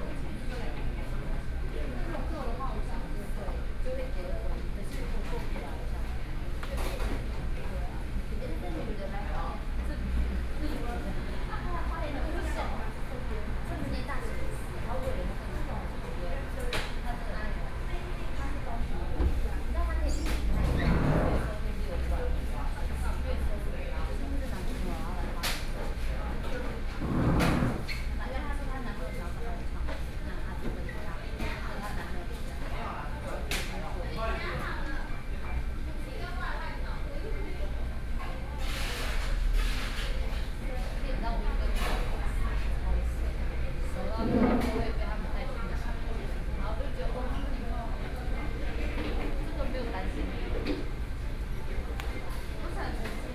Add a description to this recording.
In the fast-food restaurant （KFC）, Traffic Sound, Binaural recordings